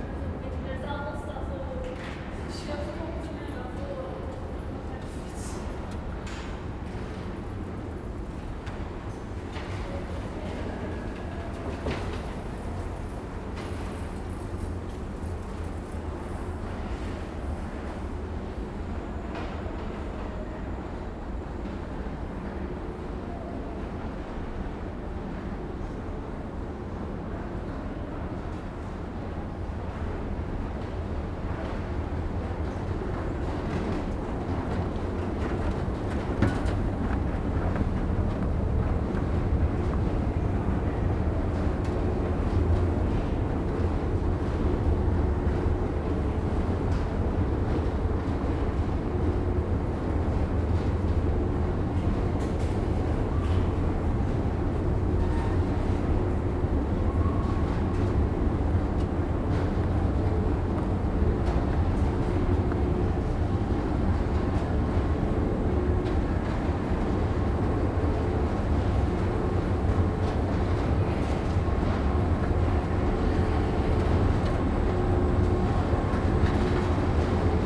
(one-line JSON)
{"title": "Sint-Annatunnel, Antwerpen, Belgium - Rolling out of the city", "date": "2018-05-12 17:02:00", "description": "Fieldrecording by Laura Loaspio\nzoom H4n\nDocumentaire one-take fieldrecording doorheen de voetgangerstunnel van Antwerpen:\nvan rechteroever (de stad) naar linkeroever (buiten de stad) van Antwerpen. Opgenomen op een warme dag in April waardoor er heel veel fietsende toeristen richting de stad trokken. Interessant aan deze plaats zijn de oer oude houten roltrappen die nog net klinken zoals vroeger omdat ze niet worden beïnvloed door geluiden van buitenaf en anderzijds de specifieke akoestiek van deze tunnel.", "latitude": "51.22", "longitude": "4.39", "altitude": "2", "timezone": "Europe/Brussels"}